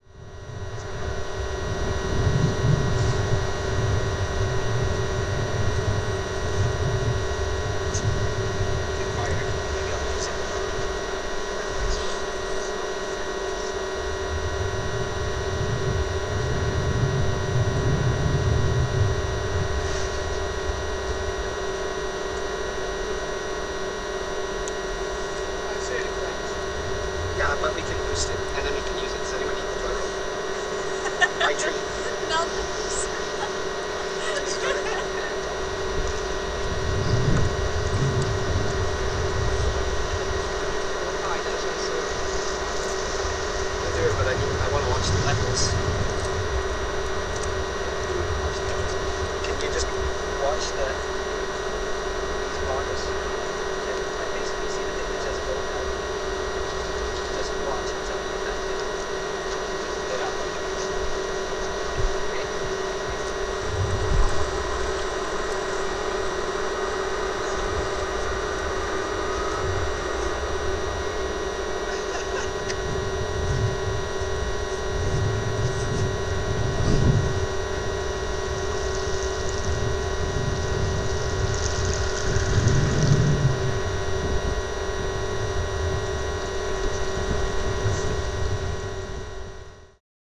Tallinn, Baltijaam chemical toilet - Tallinn, Baltijaam chemical toilet (recorded w/ kessu karu)
hidden sounds, chemical pay toilet outside Tallinns main train station